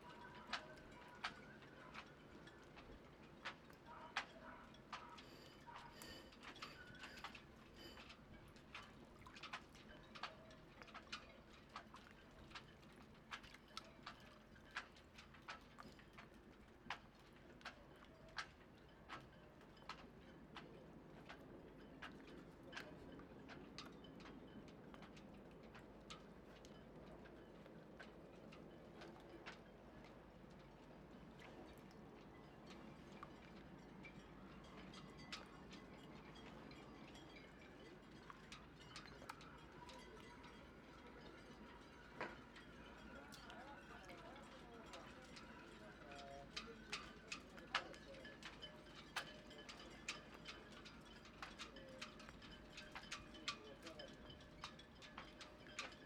Loose halyards hitting masts in some pretty strong wind blasts at a sailing port in Trieste, recorded on the steps in the quay wall.
[Sony PCM-D100 with Beyerdynamic MCE 82]
Trieste, Italy, 11 September 2016